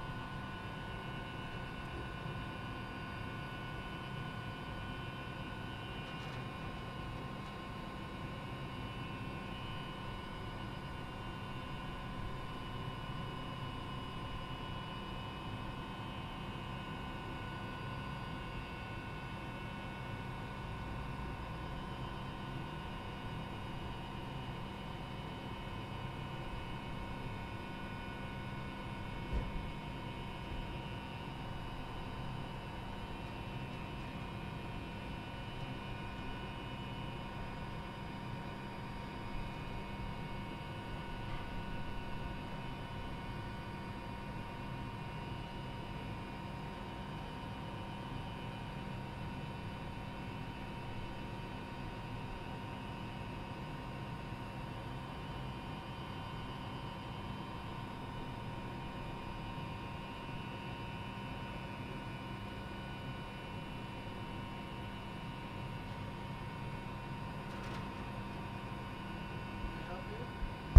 W Cache La Poudre St, Colorado Springs, CO, USA - El Pomar Drone
Mechanical/electrical drones from outside a facilities building, between El Pomar Gym and Honnen Ice Rink on Colorado College campus. An employee checks what I am doing at the end